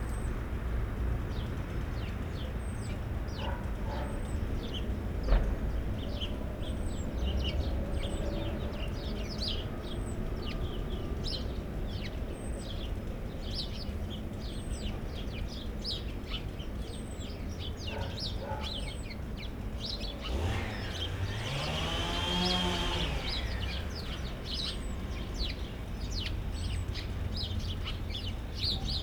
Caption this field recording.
Multi-layered noise: birds, dogs, tramway, heavy (distant) construction work, neighbour machine, passing plane, Plusieurs couches de bruit: oiseaux, chiens, tramway, bruit de travaux (puissant mais lointain), bruit de machine du voisinage, passage d’un avion